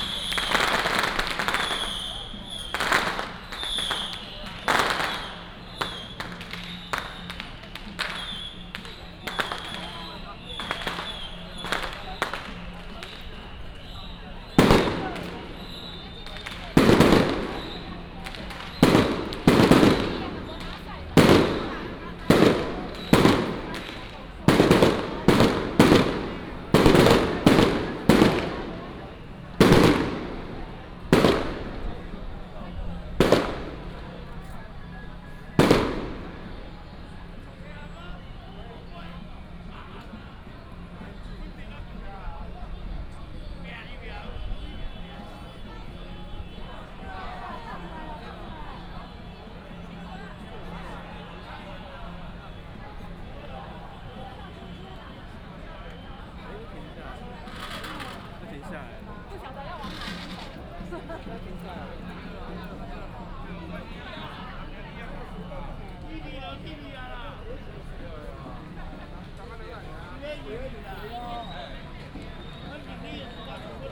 Zhongzheng Rd., Baozhong Township - Firecrackers and fireworks
Firecrackers and fireworks, Many people gathered at the intersection, Traffic sound